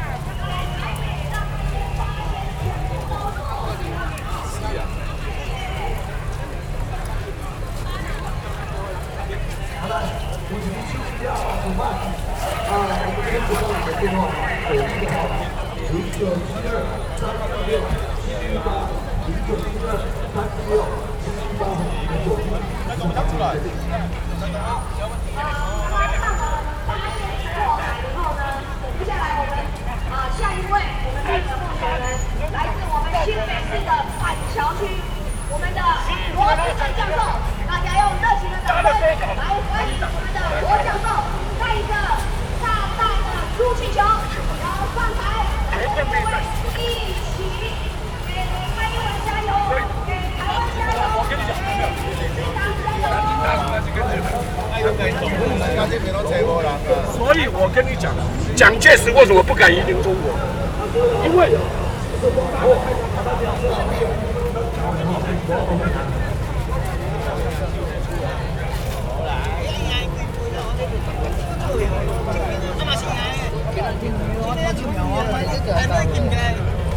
Ketagalan Boulevard, Taipei - Ketagalan Boulevard
Ketagalan Boulevard, Distant election propaganda speeches, Rode NT4+Zoom H4n
中正區 (Zhongzheng), 台北市 (Taipei City), 中華民國, 10 December